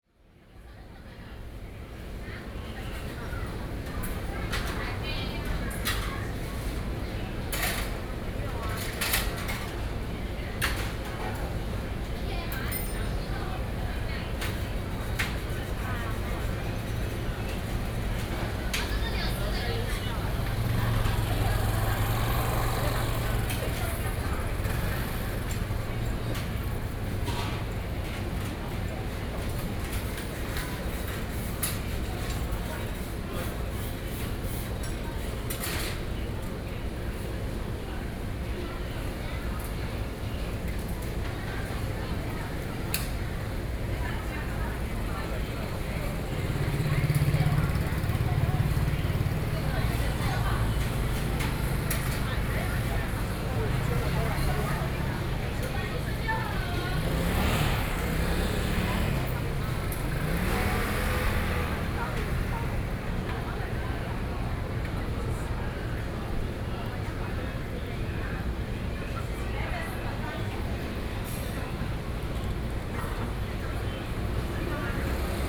Gongguan - Street corner
Street corner, In front of the Restaurant, Sony PCM D50 + Soundman OKM II